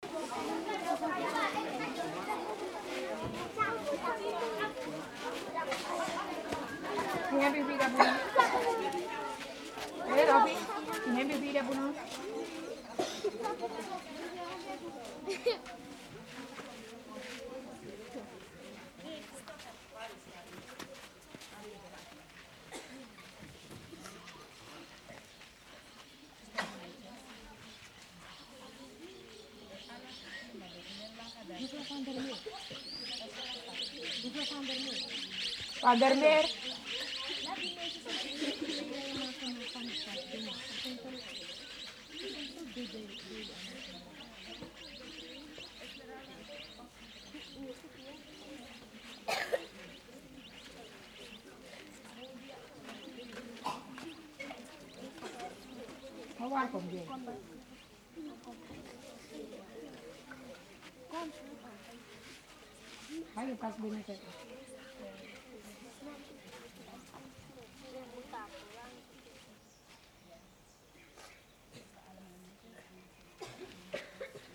Boven-Suriname, Suriname - school children sing national anthem in the morning before class in Pokigron
school children sing national anthem in the morning before class in Pokigron
7 May, 08:04